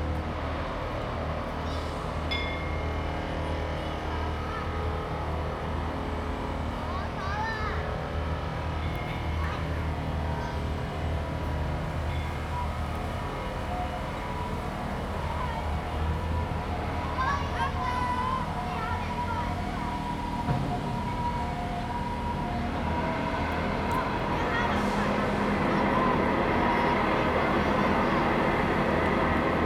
四維公園, 板橋區, New Taipei City - in the Park
In the Park, Children Playground, Sound from the construction site
Zoom H2n MS+ XY
Banqiao District, New Taipei City, Taiwan, 21 August 2015